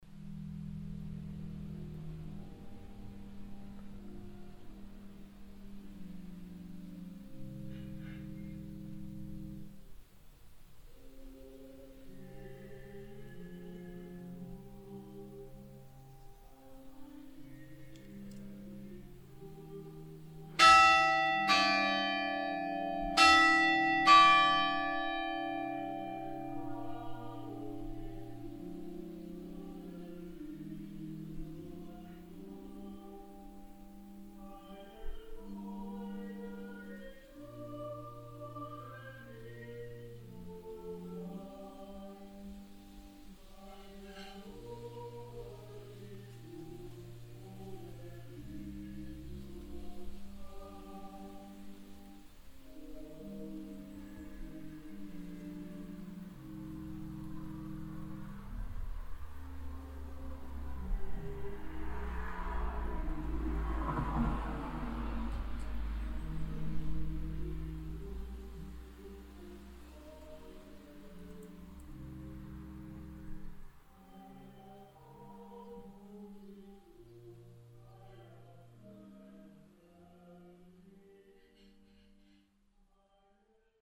michelau, church, bells and choir
Nearby the church in front of a house where someone does the dishes. The organ sound from inside the church, then the hour bells of the church followed by the distant sound of the church choir and a train passing by on a windy summer morning.
Michelau, Kirche, Glocken und Chor
Bei der Kirche vor einem Haus, wo jemand den Abwasch macht. Die Orgel ertönt aus der Kirche, dann die Stundenglocke, gefolgt von einem entfernten Laut des Kirchenchors. Ein Zug fährt vorbei an einem windigen Sommermorgen.
Michelau, église, cloches
A proximité de l’église devant une maison où quelqu’un fait la vaisselle. Le son de l’orgue en provenance de l’église, puis le carillon de l’église de l’heure juste suivi du bruit distant de la chorale paroissiale et un train qui passe, sur fond de matin d’été venteux.
Project - Klangraum Our - topographic field recordings, sound objects and social ambiences
Bourscheid, Luxembourg